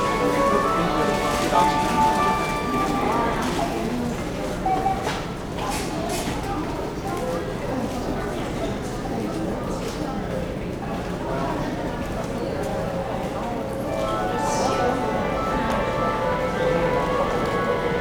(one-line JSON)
{"title": "Lingya, Kaohsiung - MRT station platform", "date": "2012-03-02 20:31:00", "latitude": "22.61", "longitude": "120.30", "altitude": "15", "timezone": "Asia/Taipei"}